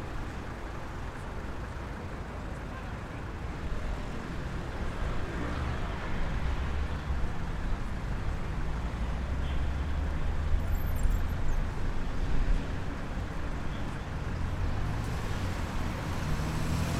Praça do Marquês do Pombal - Lisbon Center on a Chilly Sunday Morning
Lisbon, Praça Marquês do Pombal, Sunday Morning, just before a military school parade.
Recorded on H6n Zoom with the XY mic capsule and the wind foam.
Lisbon, Portugal